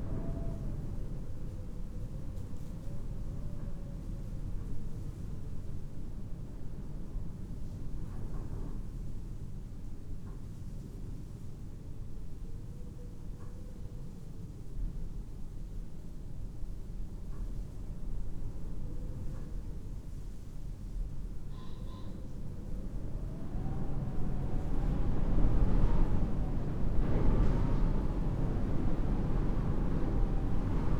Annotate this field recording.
St. Thomas Oldridge Chapel near Whitestone recorded to Olympus LS 14 via a pair of Brady omni (Primo) mics spaced on a coathanger on the altar facing into the main nave. Typical spring day, sunshine, rain, breezy. Recorded at about 2.15 pm